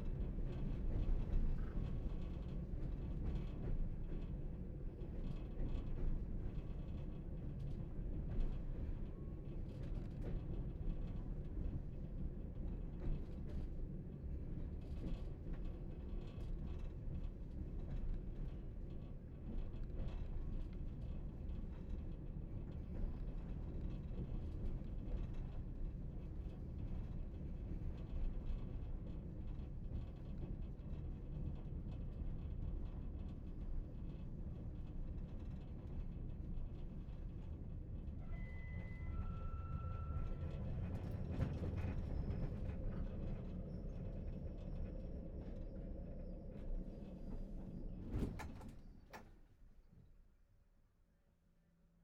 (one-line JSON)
{"title": "Somewhere between Tashkent and Bukhara, Uzbekistan - Night train, stopping and starting", "date": "2009-08-17 05:15:00", "description": "Night train, stopping and starting, juddering and shaking", "latitude": "39.98", "longitude": "67.44", "altitude": "703", "timezone": "Asia/Samarkand"}